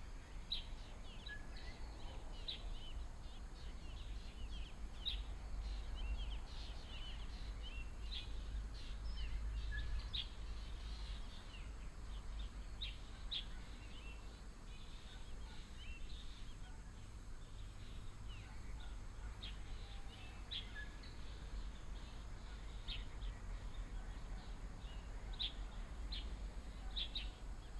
新龍路, Xinpu Township, Hsinchu County - Birds sound
birds call, Dog sounds, Traffic sound